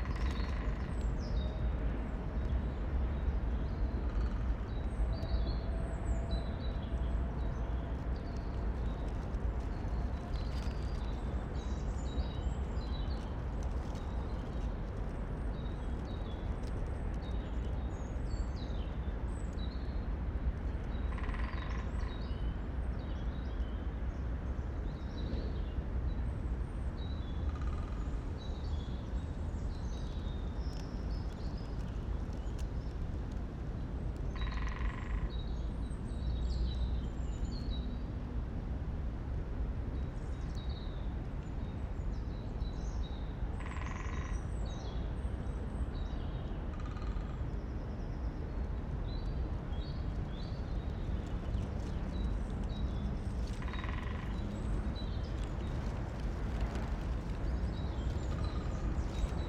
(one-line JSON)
{"title": "Braník woodland, a stormy night, rain and wind, Nad Údolím, Praha, Czechia - Dawn birds, woodpeckers and a heavy train", "date": "2022-04-08 06:31:00", "description": "Recorded from the stream. A tram moans in the valley below. Wind gust rustle the dry leaves and traffic noise grows. A longer close train rumbles and rattles past. It’s bass frequencies are quite heavy. Robins and great tits continue to sing and woodpeckers (probably great spotted woodpeckers) have started drumming on two different trees – higher and lower pitched. At dawn most birds sing but there is an order to when each species starts. Woodpeckers seem to be later than others.\nListening over time this woodland has a reasonable diversity of birds. But the constant traffic creates a sonic fog that makes them difficult to hear. I wonder if this effects how they hear each other.", "latitude": "50.03", "longitude": "14.41", "altitude": "212", "timezone": "Europe/Prague"}